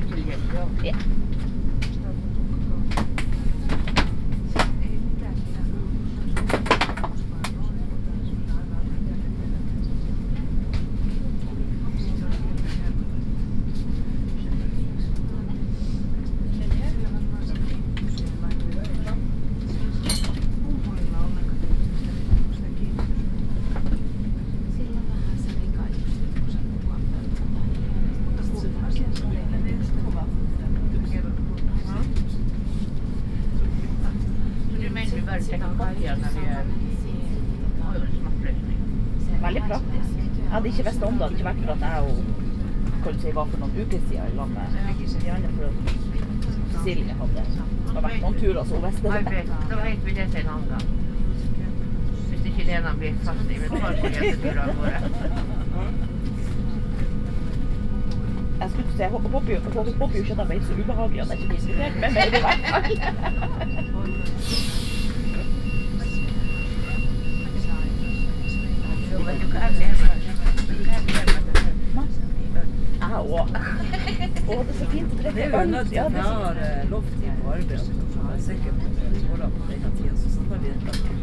Oslo, Gardermoen Oslo airport, Flytoget
Norway, Oslo, Gardermoen, airport, Flytoget, train, binaural
Norway, June 2011